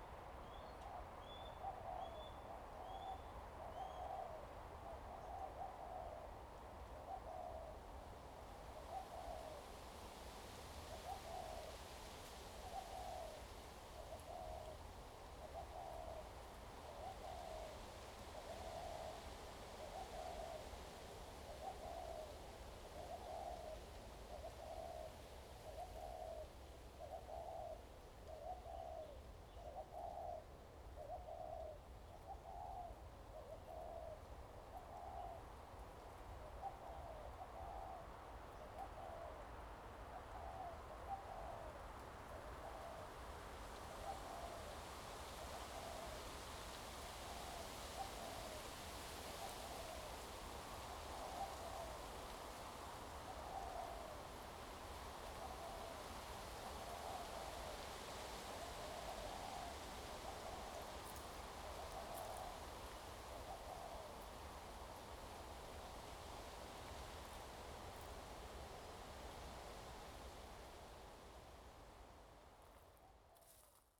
Lieyu Township, Kinmen County - In the woods
In the woods, the wind, Birds singing
Zoom H2n MS +XY